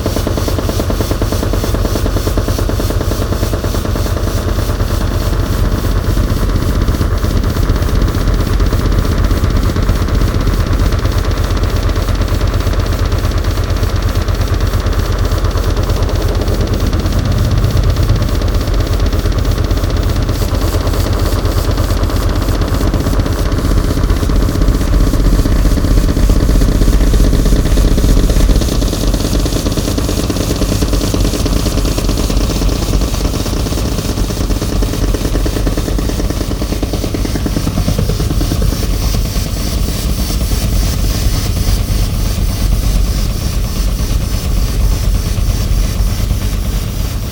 cologne, deutz mülheimer str, gebäude, visual sound festival, michael vorfeld - koeln, deutz mülheimer str, gebäude 9, visual sound festival, marcus schmickler
soundmap nrw: social ambiences/ listen to the people - in & outdoor nearfield recordings